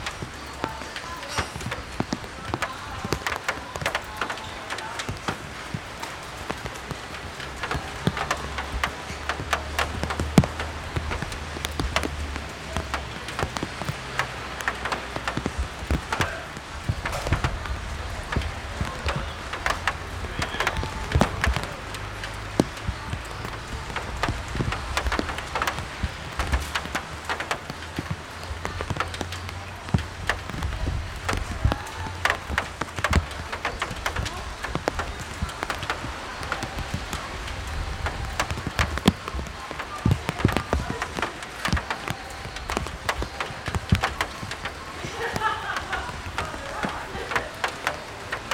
raindrops, walkers, talks, percussive sound from the microphone bag
Sollefteå, Sweden, 18 July 2011